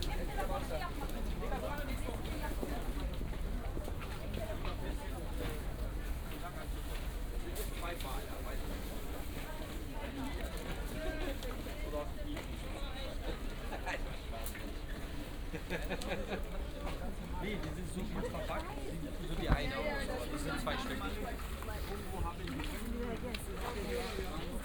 maybachufer: wochenmarkt, obst- und gemüsestand - market ambience
afternoon market ambience, looking for bread and olives. the sound of
thin plastic bags is everywhere.
(PCM D50, OKM2)
Berlin, Germany, 17 December, ~15:00